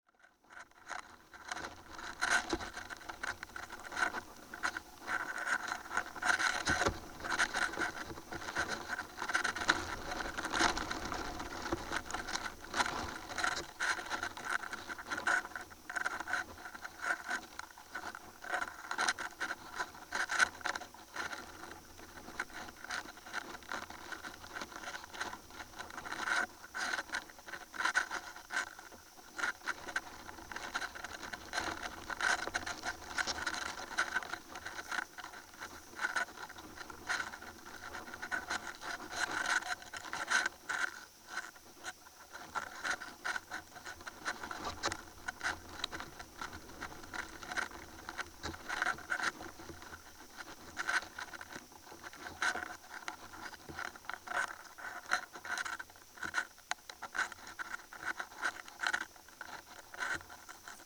road marker made of rod and some cellophane bag...recorded with contact microphone